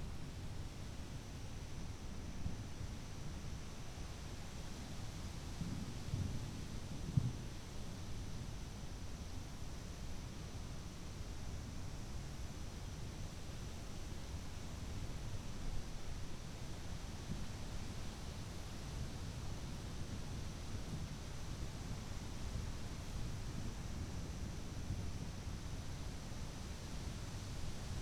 Waters Edge - Incoming Storm
This short clip starts before the rain arrives. The wind in the trees and a near constant rumble of thunder can be heard. Then there is a jet like sounding roar that kicks up just before the rain starts. Then heavy rain begins and you can hear me moving the microphone out of the rain which is blowing in. Eventually the rain blows into the garage from which I was recording too much and I stop the recording.
Washington County, Minnesota, United States, 27 August, ~19:00